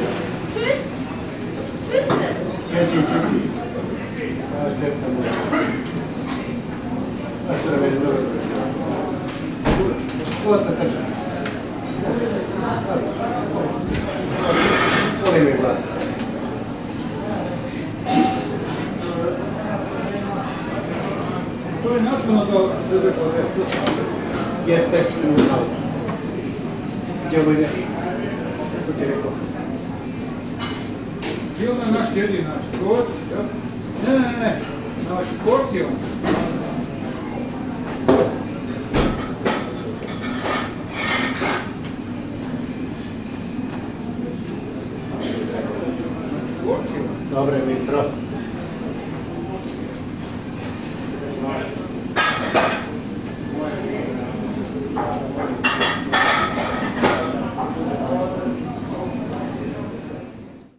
drago restaurant in der zornigen ameise, zornige ameise 3, 45134 essen